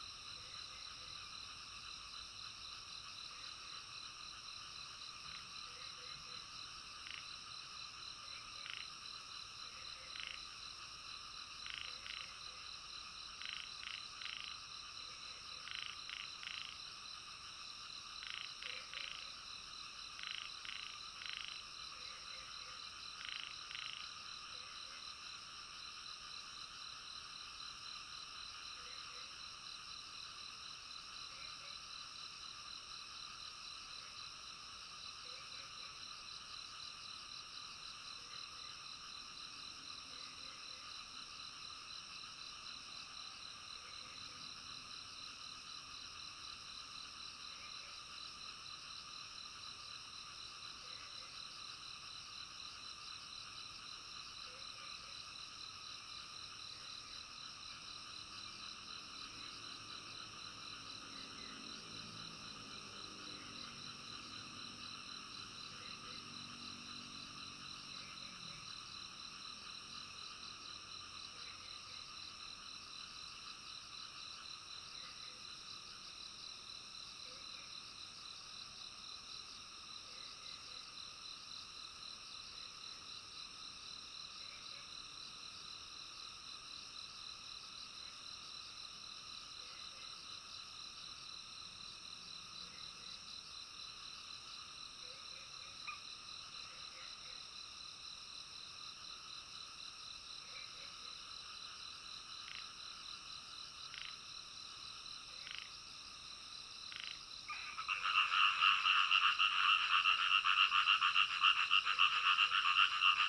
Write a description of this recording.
Frogs chirping, Early morning, Zoom H2n MS+XY